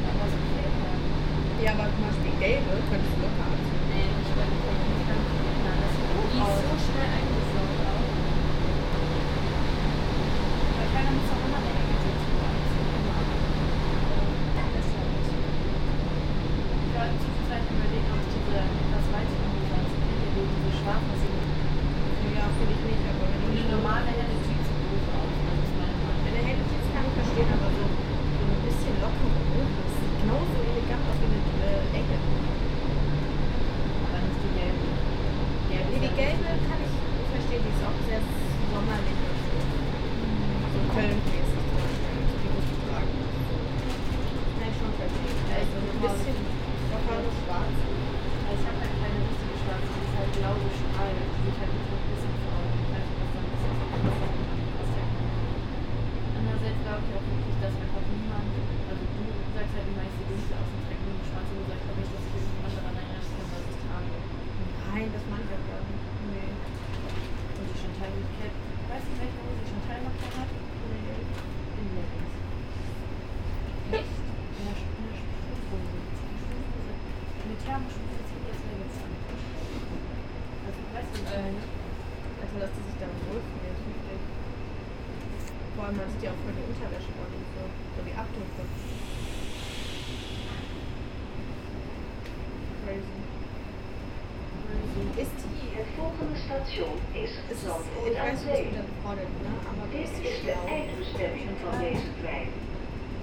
A long ride. At the beginning, endless ballet of passing trains in the Amsterdam Central station, and after, a travel into the Zandvoort-Aan-Zee train, stopping in Haarlem. The end of the recording is in the Zandvoort village, near the sea.
Amsterdam, Netherlands, 28 March 2019, 17:38